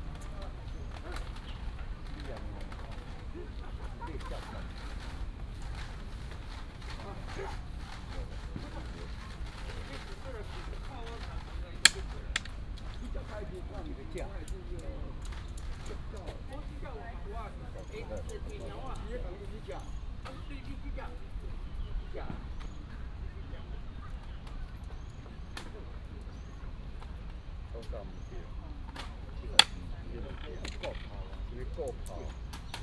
青年公園, Taipei City - playing chess
in the Park, A group of old people are playing chess, birds sound, traffic sound
Taipei City, Wanhua District, 水源路199號